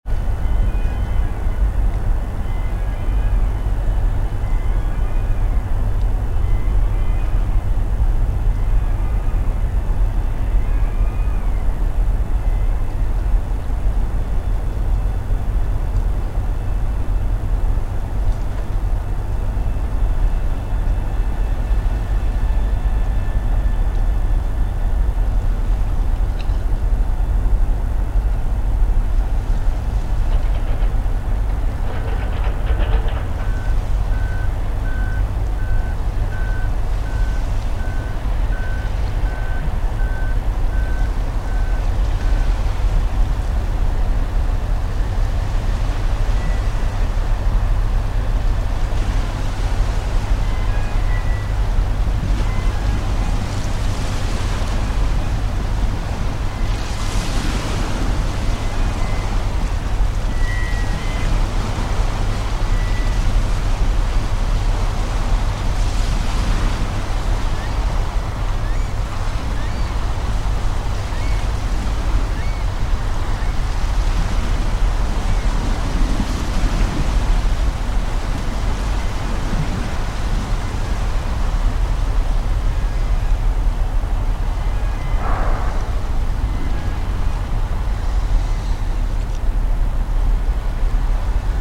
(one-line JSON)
{"title": "Dunkerque Port Ouest - DK Port Ouest", "date": "2009-04-15 23:08:00", "description": "Dunkerque Port Ouest. Docks, unloading cargo ships. Zoom H2.", "latitude": "51.02", "longitude": "2.17", "altitude": "2", "timezone": "Europe/Berlin"}